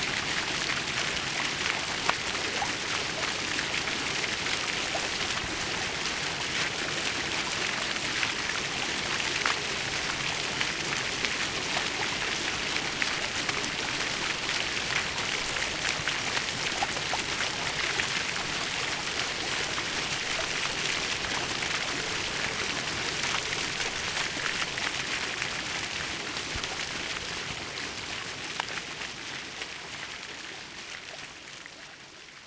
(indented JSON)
{
  "title": "göhren, strandpromenade: brunnen - the city, the country & me: fountain",
  "date": "2010-10-02 15:40:00",
  "description": "the city, the country & me: october 2, 2010",
  "latitude": "54.35",
  "longitude": "13.74",
  "altitude": "4",
  "timezone": "Europe/Berlin"
}